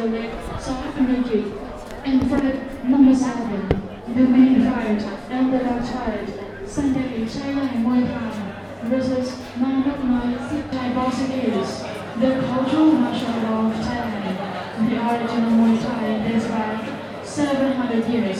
Mun Mueang Rd, Tambon Si Phum, Amphoe Mueang Chiang Mai, Chang Wat Chiang Mai, Thailand - Muay Thai fights
Muay Thai fights in Chieng Mai Boxing Stadium part one - first fight
7 January 2017, 20:04